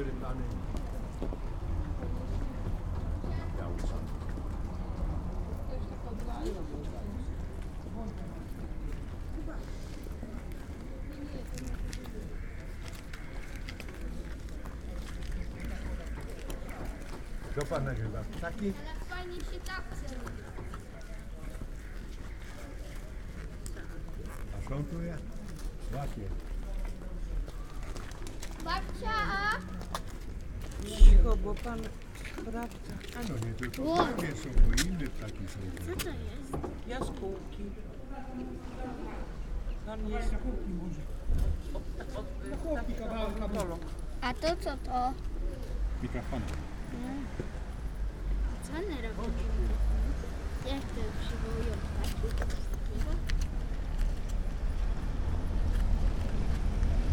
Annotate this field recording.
standing with my mkes at the castle...curious passangers...